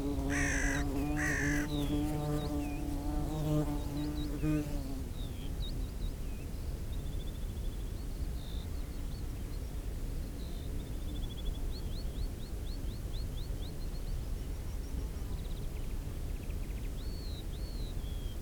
Marloes and St. Brides, UK - Skokholm soundscape ...
Skokholm soundscape ... bird song skylark and rock pipit ... calls form lesser black-backed gull ... crow ... open lavalier mics either side of sandwich box ... background noise ...